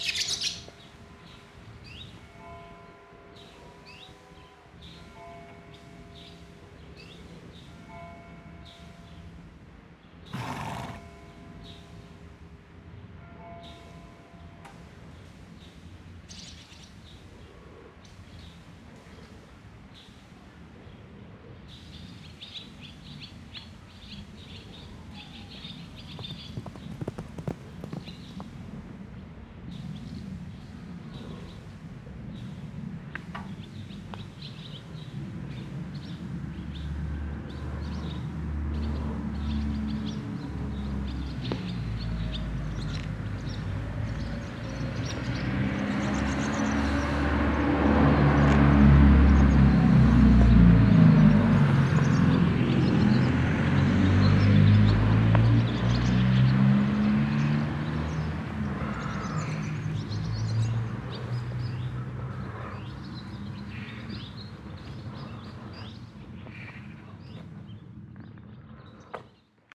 Hautbellain, Ulflingen, Luxemburg - Hautbellain, paddock at main street
Aufgenommen an einem Sommerabend. Der Klang des Schnaubens, Atmens und Laufen eines Pferdes auf einer Pferdekoppel nahe der Hauptstraße. Im Hintergrund die abendlichen Kirchglocken und die Vorbeifahrt eines Busses.
Recorded on a summer evening. The sound of a horse breathing and running in a paddock close to the town's main street. In the background a church bell and a bus passing by.